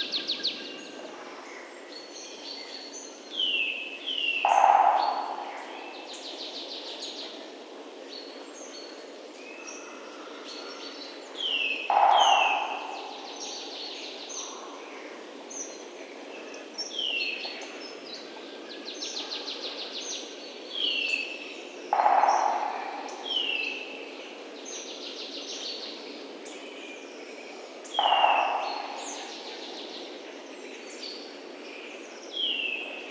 Zerkow - Czeszewo Landscape Park, Greater Poland, Poland - Zerkow - Czeszewo Landscape Park
Zerkow - Czeszewo Landscape Park, 2020.03.08, 7 a.m.; Zoom H6 and Rode NTG5
powiat wrzesiński, województwo wielkopolskie, Polska, 8 March, 07:00